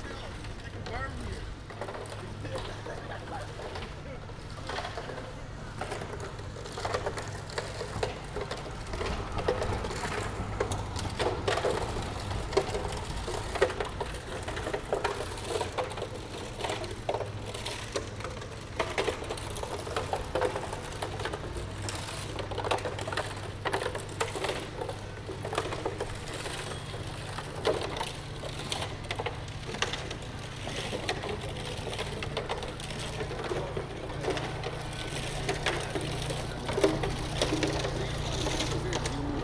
Concrete mixer, some traffic, a radio and pedestrians on the Spui.
Recorded as part of The Hague Sound City for State-X/Newforms 2010.
The Hague, The Netherlands, 19 November 2010, 13:00